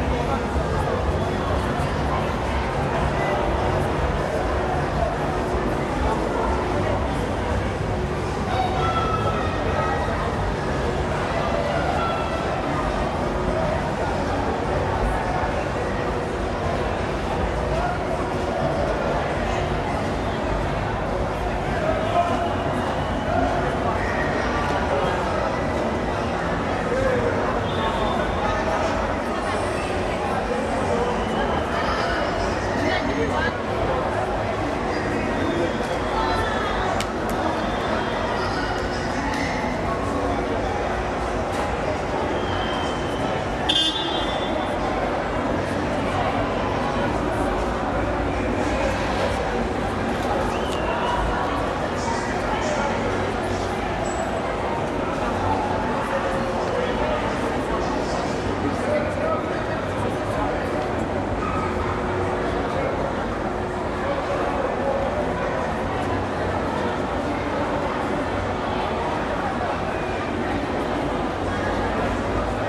Bree Street Taxi Rank, Newtown, Johannesburg, South Africa - Everyone's broadcasting...
A stroll through Bree street taxi rank… I often travel from here… this day, I came for listening… everyone’s “broadcasting” here… I drift across the ground floor level … between parking combies, waiting and lingering people … along the small stalls of the traders… then half a floor up through the “arcade” along the market stalls…
(mini-disk recording)